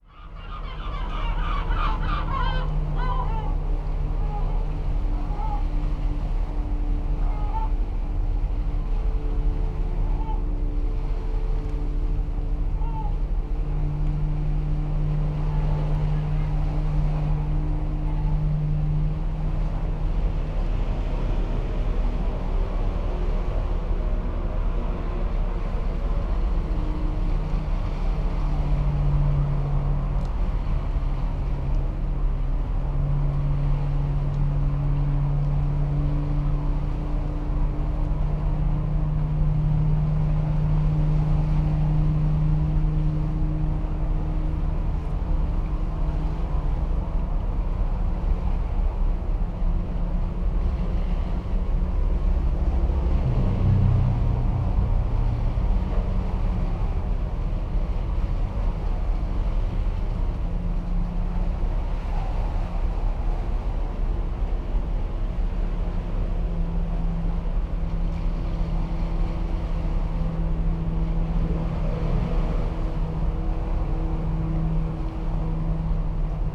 Viale Miramare, Trieste, Italy - sea roar
seashore area heard from metal tube of a traffic sign